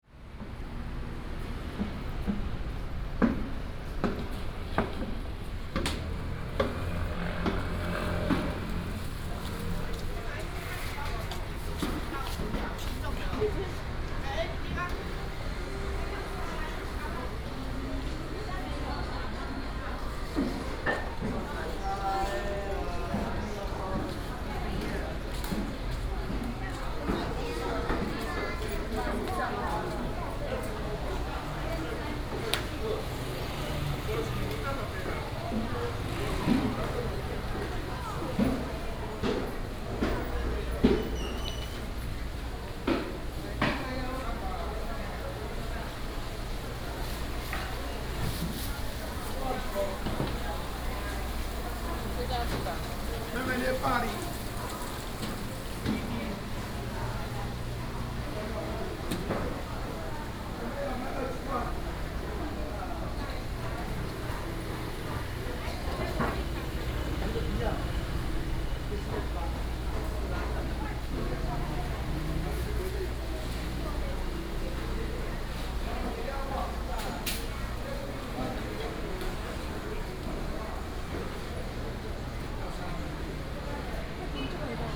義成黃昏市場, Taiping Dist., Taichung City - dusk market
in the dusk market, Traffic sound, vendors peddling, Binaural recordings, Sony PCM D100+ Soundman OKM II